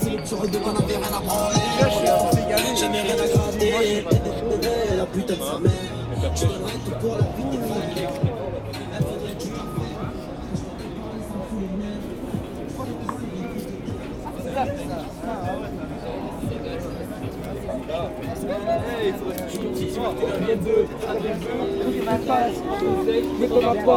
Namur, Belgique - Drunk people
On the wharf of the Sambre river, there's no easy access for policemen. They can't come with the car, so junkies come here. I took risks to make this recording, as I went really inside the groups. They drink very too much beer, smoke ganja, listen intellectual quarter-world music, shit and piss on the ground, fight... and ... sing ? sing ? OK sorry, rather bawl they put their bollocks in my tears (truthful). Oh my god...